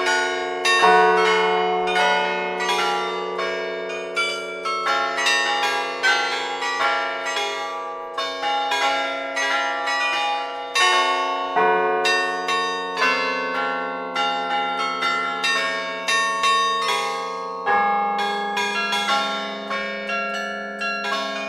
Bd Joseph Hentgès, Seclin, France - Collégiale de l'église St-Piat - Seclin
Collégiale de l'église St-Piat - Seclin (Nord)
Avec le Maître carillonneur Thomas Roeland.